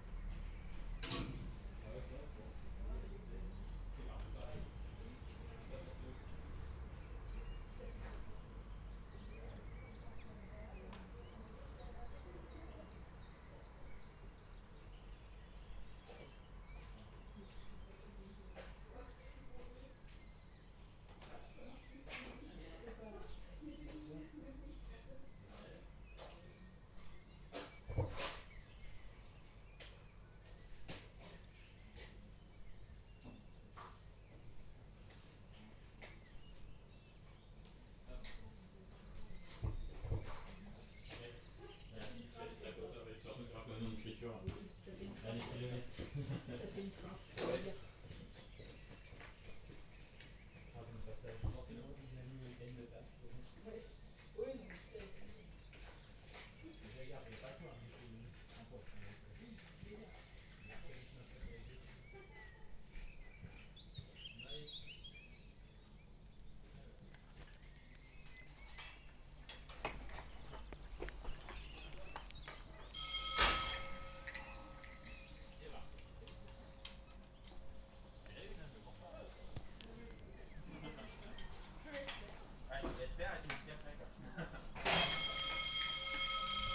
Bugeat, France - WLD 2014 gare de Bugeat
Common countryside birds_Train arrival and departure_Masterstation working on the bell